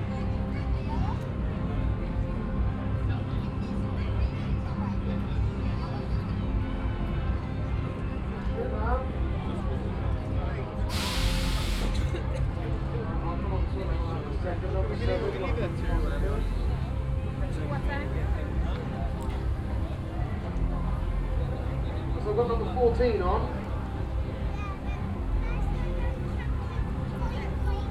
Vereinigtes Königreich - Brighton, pier, dolphin race
At Brighton Pier, a full artificial amusement area - the sound of a man announcing and commenting a game called dolphin race.In the background the sounds of other venues on the fairground and an electric trolley passing by.
international city scapes - topographic field recordings and social ambiences
4 October, 12:00